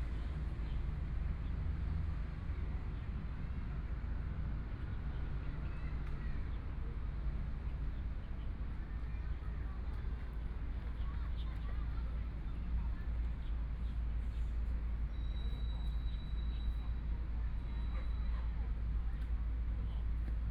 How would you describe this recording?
Afternoon park, Dogs barking, People walking in the park and rest, Traffic Sound, Binaural recordings, Zoom H4n + Soundman OKM II